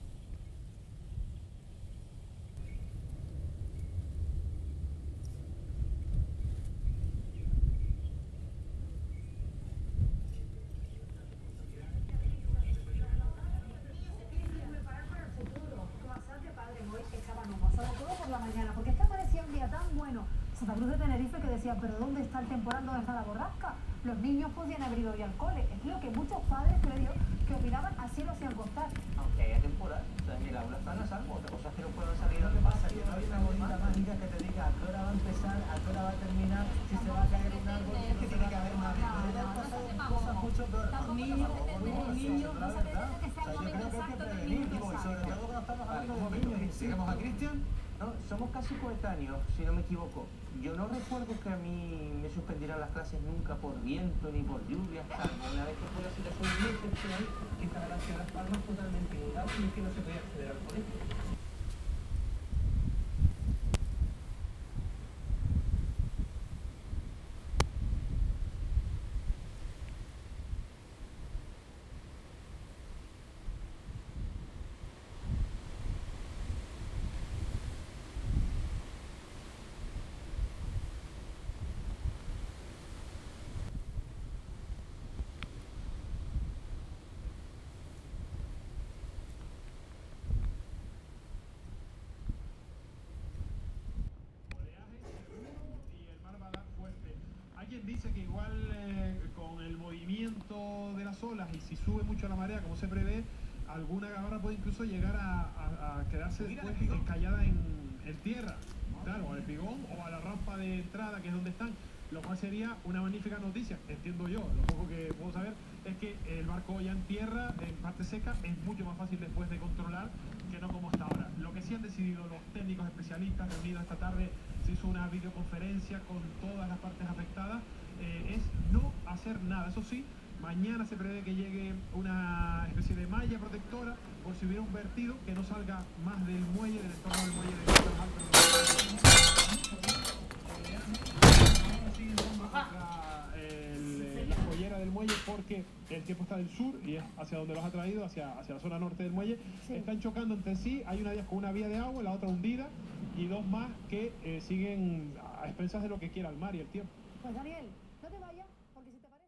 Ctra. las Meleguinas, Las Meleguinas, Las Palmas, Spain - The storm ema inside and outside of the house
the local tv news and howling of the wind outside the house.
2 March 2018, ~19:00